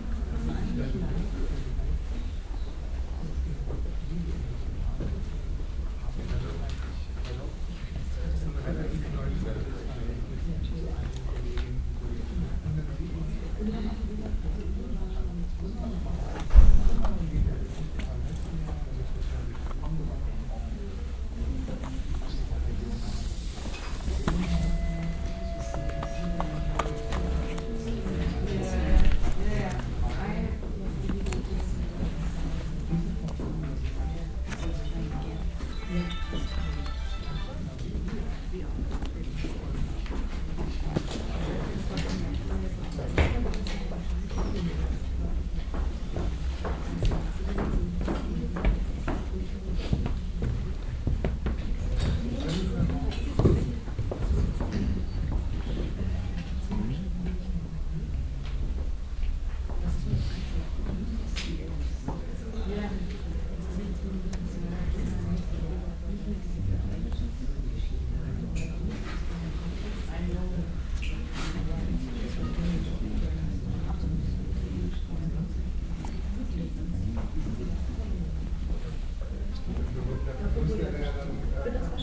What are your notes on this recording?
publikum vor film vorführung im rahmen der emaf 2008, project: social ambiences/ listen to the people - in & outdoor nearfield recordings